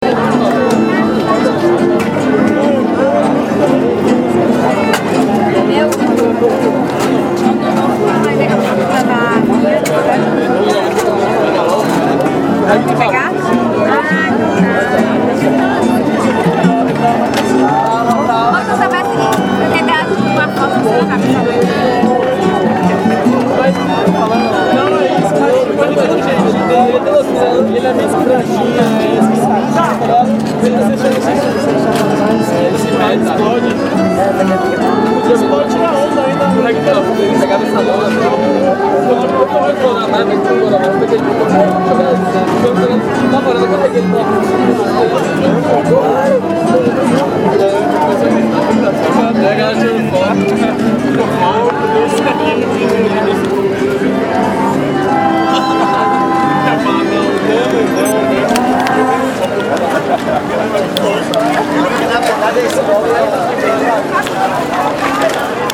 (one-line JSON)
{"title": "Barra, Salvador - Bahia, Brazil - Festival de Artistas de Rua", "date": "2014-03-16 00:21:00", "description": "Caminhando pela orla da praia da Barra em Salvador, me deparo com vários artistas de rua tocando, cantando, brincando, atuando, recitando poesia. Esse era um quarteto de violino, viola, cello e violão. As pessoas ao redor começaram a cantar com os artistas de rua.\nGravado com um simples gravador de mão Sony ICD PX312", "latitude": "-13.01", "longitude": "-38.53", "altitude": "10", "timezone": "America/Bahia"}